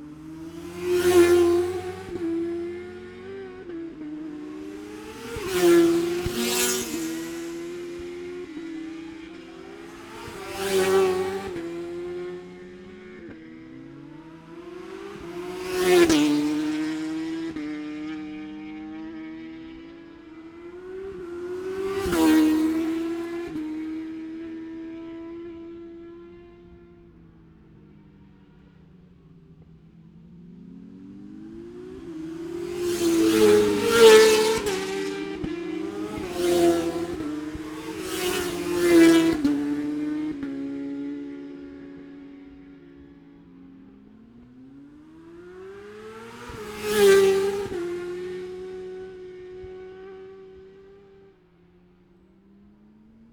Scarborough, UK - motorcycle road racing 2017 ... 600 ...
600cc practice ... even numbers ... Bob Smith Spring Cup ... Olivers Mount ... Scarborough ... open lavalier mics clipped to sandwich box ...
22 April 2017